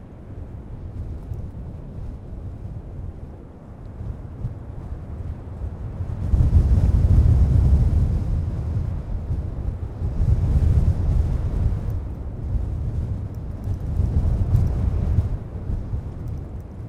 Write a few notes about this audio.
Hilary Wilson keeps Rough Fell sheep and has written a book about hill farming. She has collected a lot of oral histories of hill farmers in Cumbria, and is very keen on the Rough Fell sheep and the whole way of life that surrounds farming this breed in the Lake District. This is the sound of the wind on her farm, which I think does a great job of evoking all the reasons why the Rough Fell sheep needs such a thick, coarse fleece to protect it from the elements.